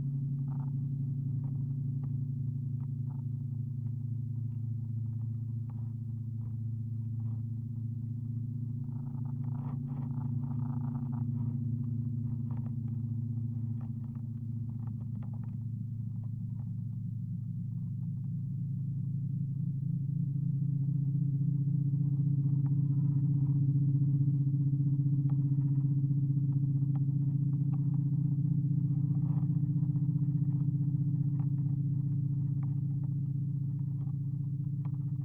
Curonian Spit, Lithuania, resonances in dunes
contact microphones on long paracord string. almost no wind in presence